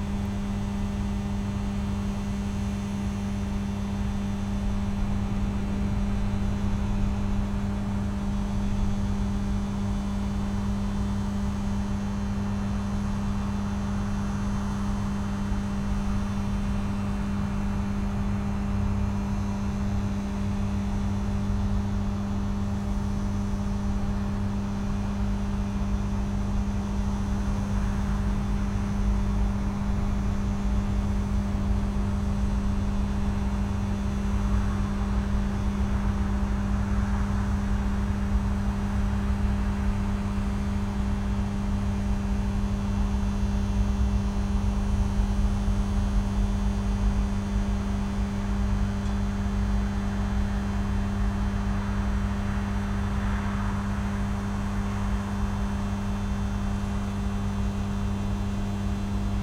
Utena, Lithuania, at electrical substation
Low buzz of electrical substation transformers
Utenos apskritis, Lietuva